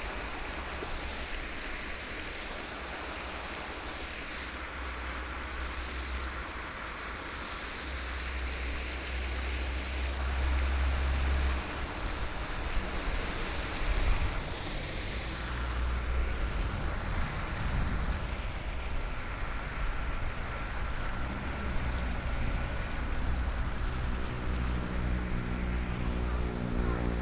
壯圍鄉大福村, Yilan County - Breeding pond
in the Breeding pond, Small village, Traffic Sound
Sony PCM D50+ Soundman OKM II
26 July 2014, 16:09